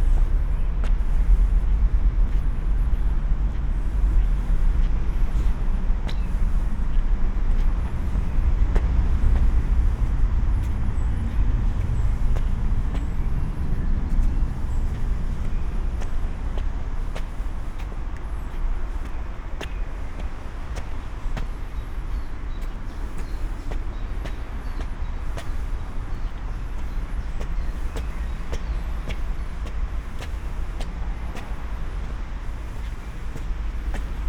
Vallée de la Pétrusse, Luxemburg - climbing up the stairs
climbing up the steep stairs to the upper Luxembourg, from the bottom of Vallée de la Pétrusse. An aircraft is flying over, creating heavy drones in the narrow valley.
(Olympus LS5, Primo EM172)